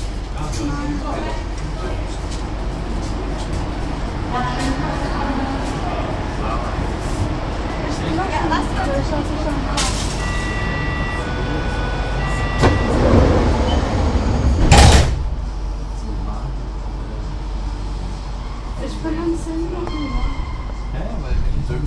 S-Bahn zwischen Friedrichstraße und Hackescher Markt
Berlin, Germany